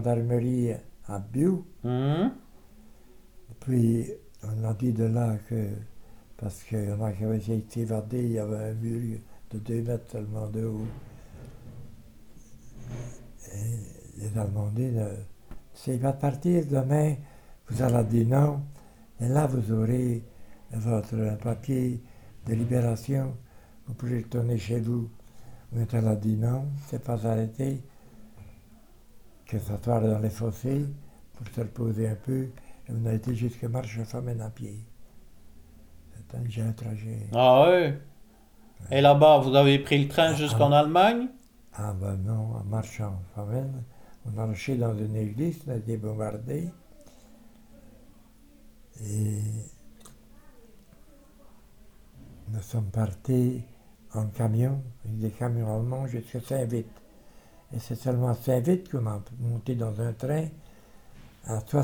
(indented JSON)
{
  "title": "Mettet, Belgique - Robert Cheverier",
  "date": "2016-11-26 17:00:00",
  "description": "Robert Cheverier was a fighter in the Saint-Heribert bunker. In may 1940, german fighters won the battle, the belgian fighters were deported in Dresden. Robert Cheverier talks about his life inside the bunker and the deportation. He's 95 years old and deaf, so we have to speak very loudly as to be understood.\nFrançoise Legros is the owner of the Saint-Héribert bunker in Wepion village. Robert Cheverier is the last alive fighter of the Saint-Héribert underground bunker.",
  "latitude": "50.33",
  "longitude": "4.65",
  "altitude": "221",
  "timezone": "Europe/Brussels"
}